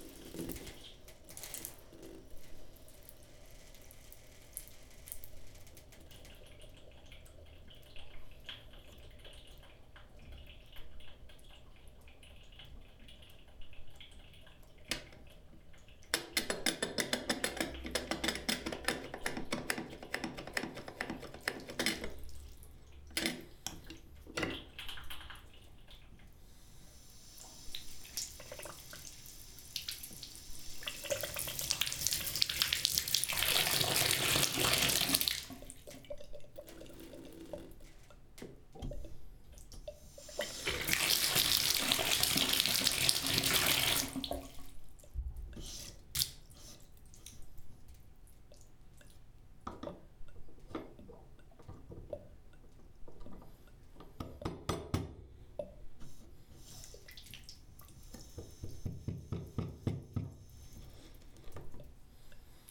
Montreal: Boul. St-Joseph, block (bathtub) - Boul. St-Joseph, 300 block (bathtub)
equipment used: Zoom H4
Playing with water, quite enjoyable variety of sounds within the plumbing system.